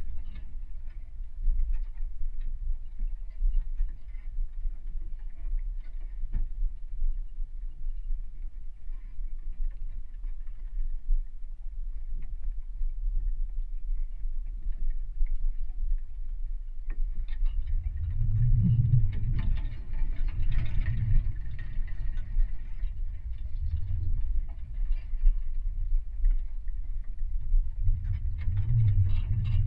Sirutėnai, Lithuania, fence at abandoned cemetery
Abandoned cemetery and some ruins of a chappel on a hill. Contact misc on a fence.
Utenos rajono savivaldybė, Utenos apskritis, Lietuva, March 2021